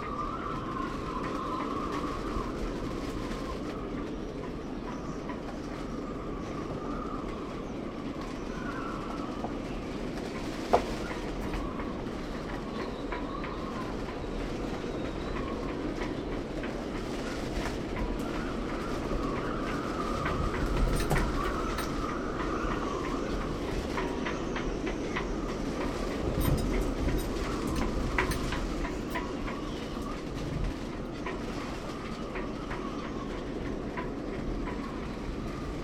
workum, het zool: marina, berth h - the city, the country & me: marina, aboard a sailing yacht
stormy night (force 7-8), short after midnight, the wind is flapping the tarp
the city, the country & me: july 21, 2008
Workum, The Netherlands, August 2008